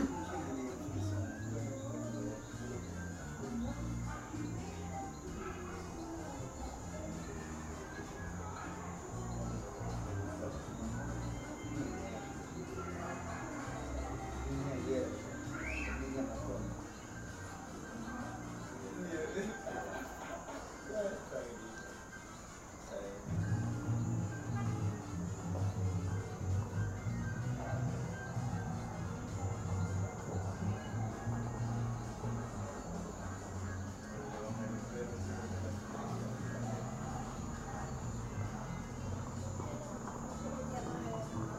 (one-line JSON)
{"title": "San Jacinto de Buena Fe, Ecuador - Talking with friends: At night on the roof.", "date": "2016-03-01 21:00:00", "description": "Having some beers with friends, you can hear the night ambiance and mood of the typical ecuadorian coast town.", "latitude": "-0.89", "longitude": "-79.49", "altitude": "104", "timezone": "America/Guayaquil"}